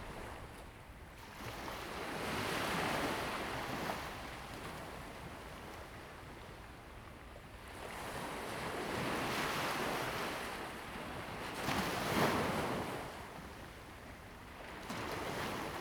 湖下海堤, Jinning Township - the waves and wind
sound of the waves, Crowing sound
Zoom H2n MS+XY